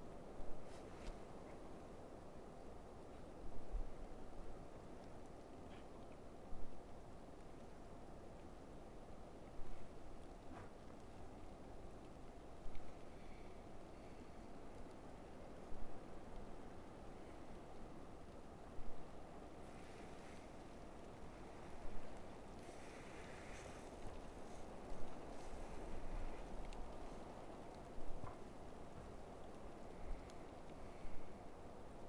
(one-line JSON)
{"title": "neoscenes: coming down scree slope", "date": "2009-06-20 17:05:00", "latitude": "38.82", "longitude": "-107.30", "altitude": "2598", "timezone": "US/Mountain"}